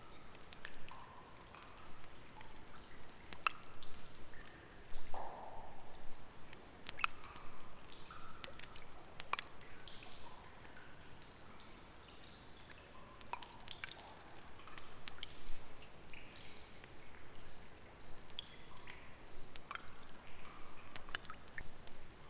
Rakov Skocjan, Słowenia - Cave
Sounds inside cave/Vajkard/International Workshop of Art and Design/Zoom h4n